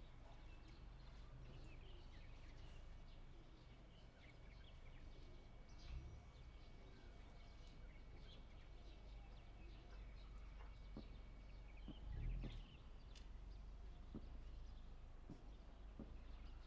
On the second floor, Neighbor's voice, Early in the morning, Chicken sounds, The sound of firecrackers, Motorcycle sound, Zoom H6 M/S
1 February, 07:04